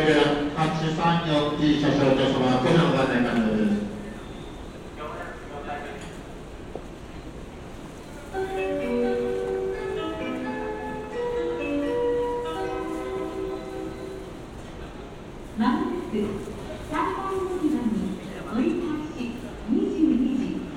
Japan, Kyōto-fu, Kyōto-shi, Shimogyō-ku, 京都府京都市下京区烏丸通塩小路下ル東塩小路町 Kyoto Station Building - 201811302220 JR Kyoto Station Kosei Line Platform
Title: 201811302220 JR Kyoto Station Kosei Line Platform
Date: 201811302220
Recorder: Zoom F1
Microphone: Roland CS-10EM
Location: Kyoto, Kyoto, Japan
GPS: 34.985487, 135.759484
Content: binaural trains platform people coughing noise alarm approaching kyoto japan japanese warning station night
30 November 2018